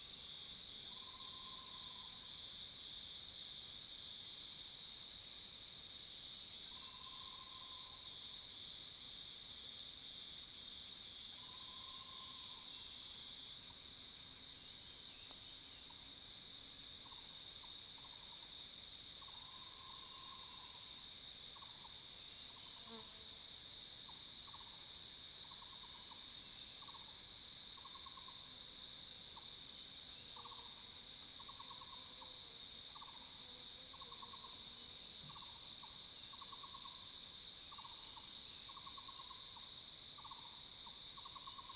油茶園, 五城村 Yuchih Township - In the morning
Cicada sounds, Birds called, early morning
Zoom H2n MS+XY
Yuchi Township, 華龍巷43號, June 2016